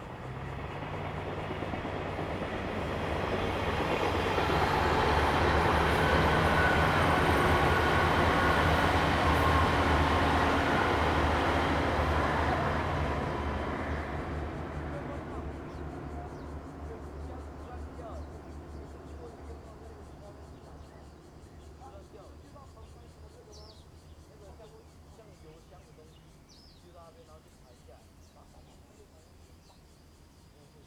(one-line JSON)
{"title": "卑南里, Taitung City - The old railroad tracks", "date": "2014-09-09 08:54:00", "description": "Birdsong, Traffic Sound, Aircraft flying through, The weather is very hot, Train traveling through\nZoom H2n MS +XY", "latitude": "22.78", "longitude": "121.11", "altitude": "36", "timezone": "Asia/Taipei"}